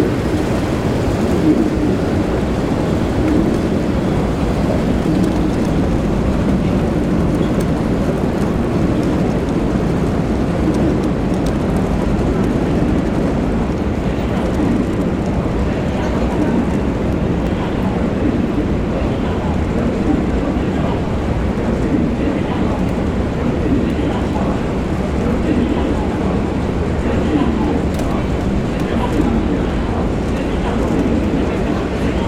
Montparnasse, RadioFreeRobots, lost in subway
Paris, France